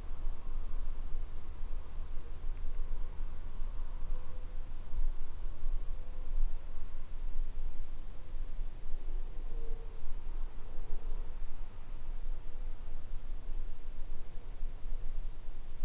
{
  "title": "Siła, Pole - Full moon quiet",
  "date": "2009-09-08 22:28:00",
  "description": "Last days of summer. Full moon in the middle of nowhere.",
  "latitude": "53.72",
  "longitude": "20.35",
  "altitude": "123",
  "timezone": "Europe/Warsaw"
}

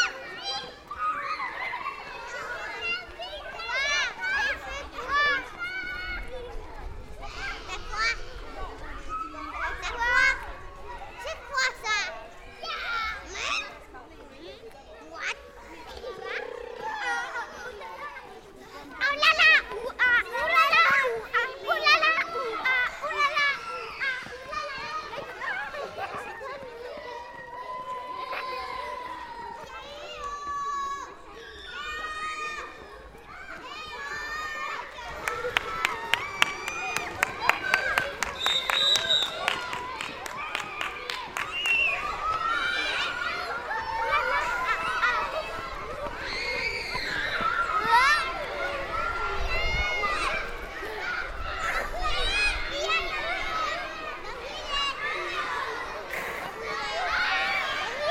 {
  "title": "Rue Pasteur, Béthune, France - École Maternelle Pasteur - Béthune - Cour de récréation.",
  "date": "2022-10-04 10:30:00",
  "description": "École Maternelle Pasteur - Béthune\nCour de récréation.\nZOOM H6",
  "latitude": "50.54",
  "longitude": "2.64",
  "altitude": "27",
  "timezone": "Europe/Paris"
}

